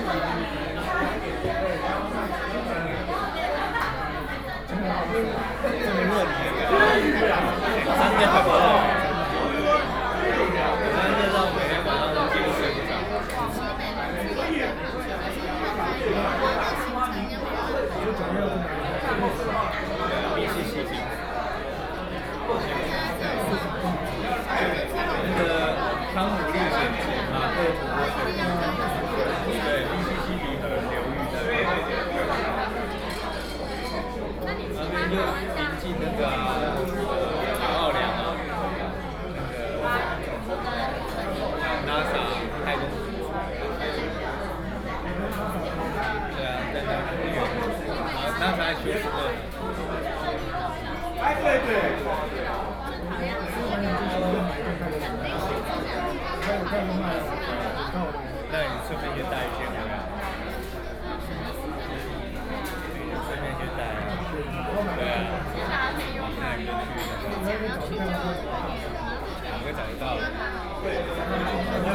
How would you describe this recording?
Artists from different countries are dinner, Sony PCM D50 + Soundman OKM II